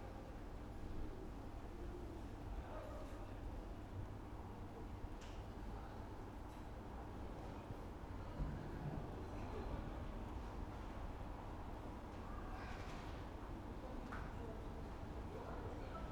Poznań, Jerzyce district, Rialto Cinema - people leaving the cinema after the show
late evening, wet ambience in front of the cinema, spectators leaving the building, everybody in great mood after watching the last Woody Allen movie. the employees are closing the cinema.
Poznań, Poland